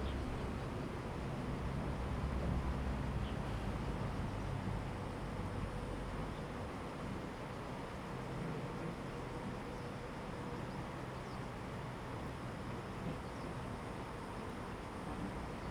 鹿野溪, Beinan Township - On the Riverbank
Birdsong, Traffic Sound, Stream, On the Riverbank
Zoom H2n MS +XY